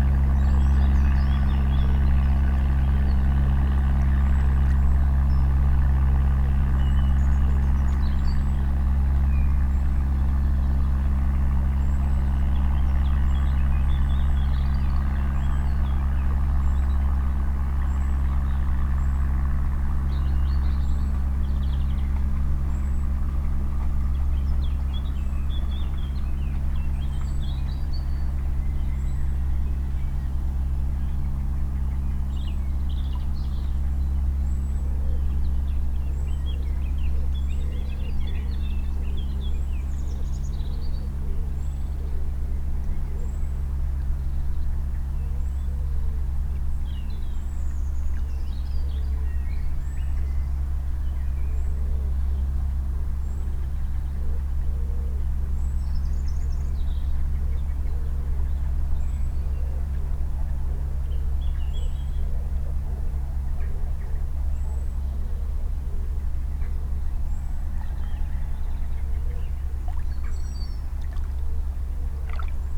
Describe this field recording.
A Narrowboat passes a small beach uncovered by the river. The boat's wash laps the sand then rebounds from the opposite bank. At the end two geese fly overhead together. The mics and recorder are in a rucksack suspended from an umbrella stuck in the sand. MixPre 3 with 2 x Beyer Lavaliers.